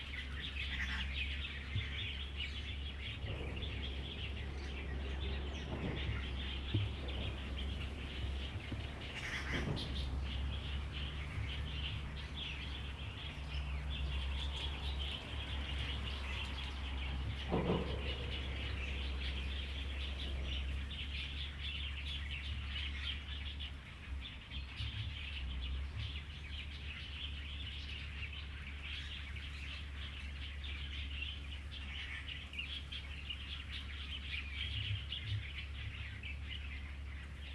National Museum of Prehistory, Taitung City - Birdsong
Birdsong, Traffic Sound, Very hot weather
Zoom H2n MS+ XY